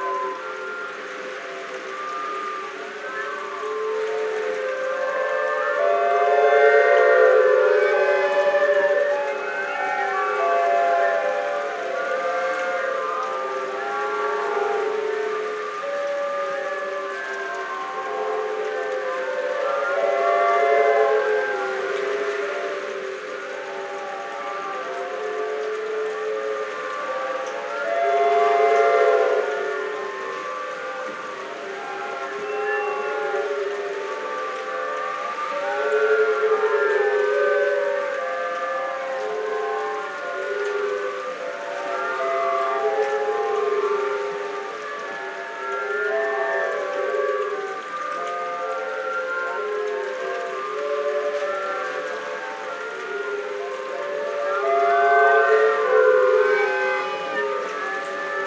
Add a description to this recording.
Rumors from the sea is a site specific sound installation composed of 130 bamboos, usually used in Thailand as seawalls to slow coastal erosion, with a flute at their top. A bamboo-flutes orchestra played by the waves, performing unique concerts 24 hours a day, depending of the tide, the direction, tempo and force of the waves. As a potential listener, you are invited to define the beginning and the end of the music piece played for you. Project done in collaboration with the Bambugu’s builders and the students of Ban Klong Muang School. We imagine together a creature that could come from the sea to help humans to fight climate change: the installation is a call to listen to it singing, it screaming, while it tries to stop the waves.